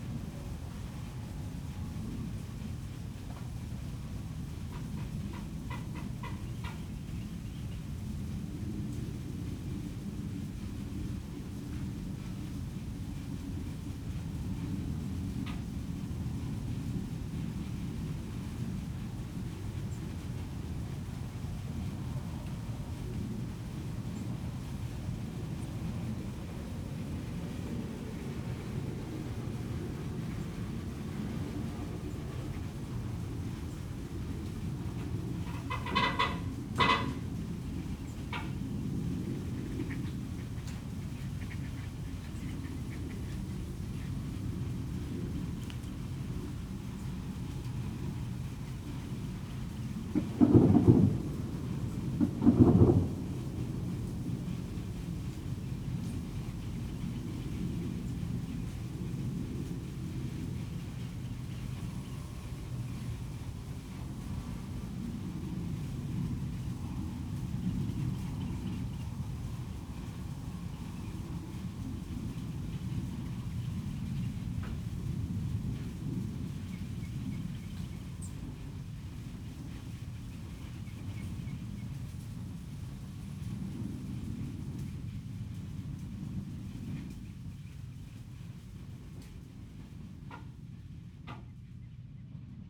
Fayette County, TX, USA - Dawn Ranch
Recorded with a pair of DPA4060s and a Marantz PMD661.